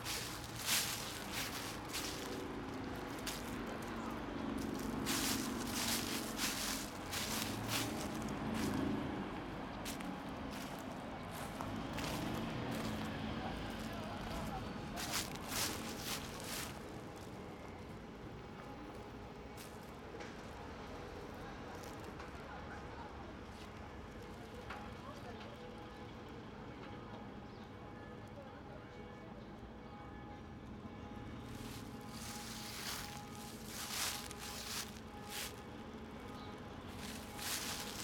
Schloßpark, Spandauer Damm, Berlin, Germany - Dryleaves